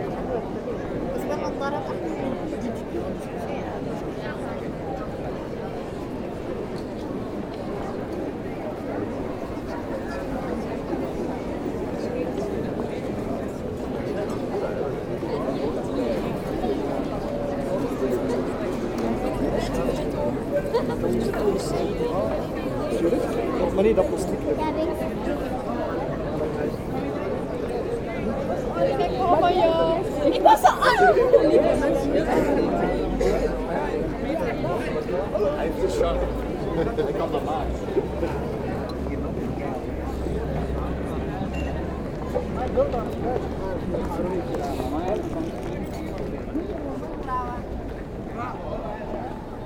Gent, België - Festive atmosphere
Festive atmosphere along the canal. People drink by the water and are happy to be together.